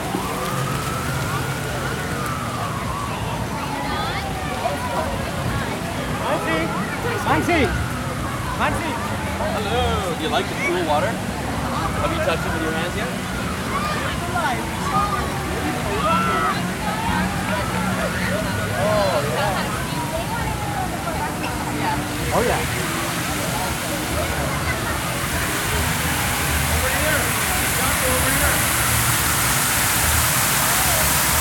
Lake Shore East Park Sounds on the West Side
Recorded on Zoom H4N. Above water, near the Western Water Gardens in the Lake Shore East Park.
IL, USA, 8 June 2017